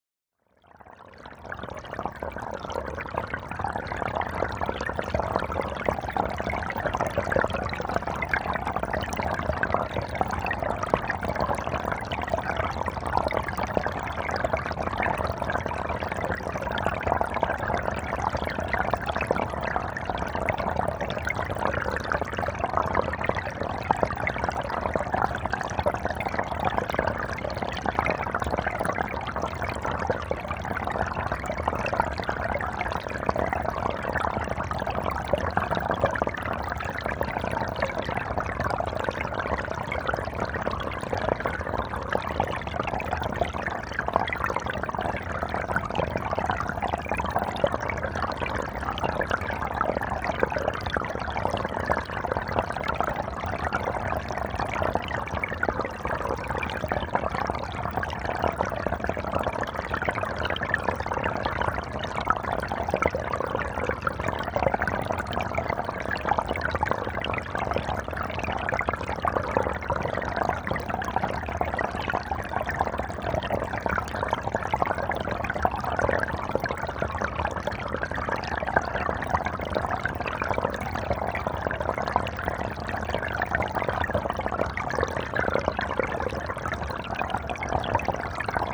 Walking Holme Stream 2
Stereo hydrophones downstream from Holme Moss summit